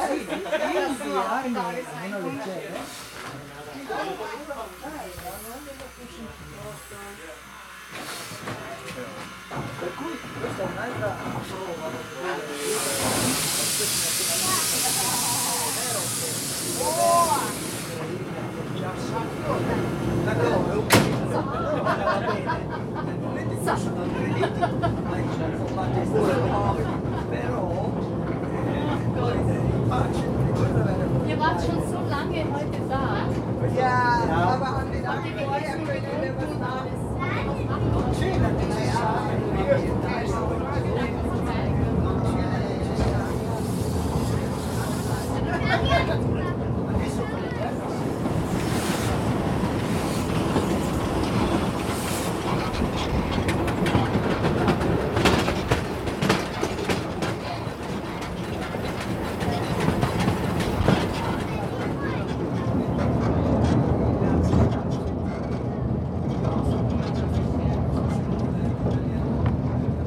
Eisenbahnmuseum Strasshof: short passenger ride with historic steam locomotive